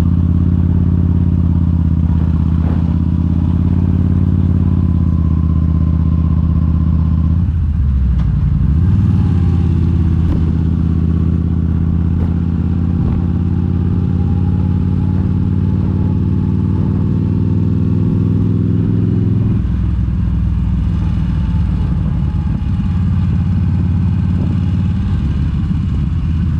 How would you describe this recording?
a lap of olivers mount ... on a yamaha xvs 950 evening star ... go pro mounted on helmet ... re-recorded from mp4 track ...